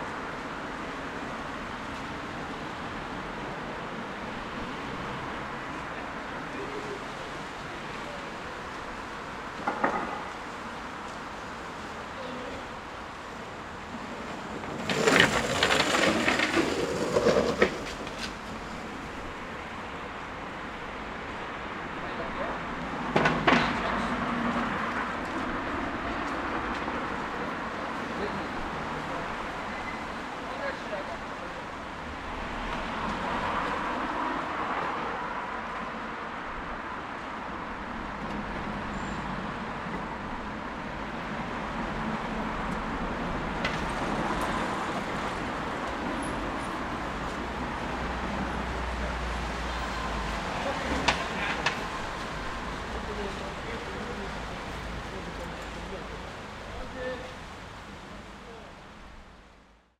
Dublin Rd, Belfast, UK - Dublin Road
Recording in front of two bars which are now closed (Filthy’s and The Points), a little number of pedestrians and vehicles passing, the sound of a skateboarder, little bit of wind. This is five days after the new Lockdown 2 in Belfast started.
21 October, 19:40